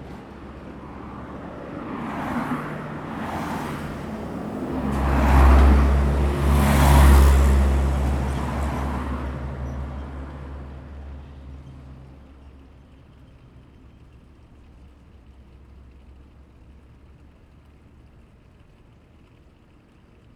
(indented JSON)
{
  "title": "大竹村, Dawu Township - Traffic Sound",
  "date": "2014-09-05 12:02:00",
  "description": "Traffic Sound, The weather is very hot\nZoom H2n MS +XY",
  "latitude": "22.46",
  "longitude": "120.94",
  "altitude": "15",
  "timezone": "Asia/Taipei"
}